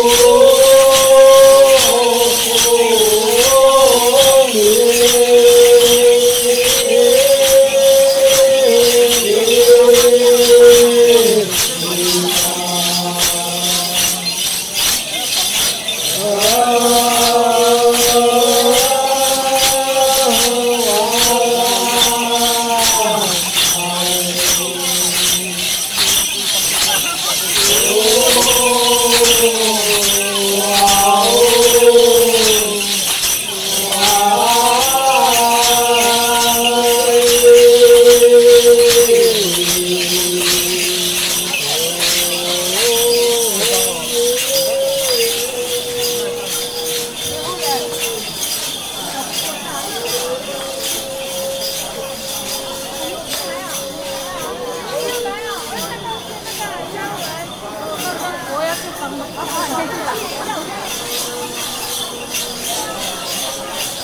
Recoding of Pas-ta'ai ceremony in Taiwan.
苗栗縣(Miaoli County), 中華民國, 1 December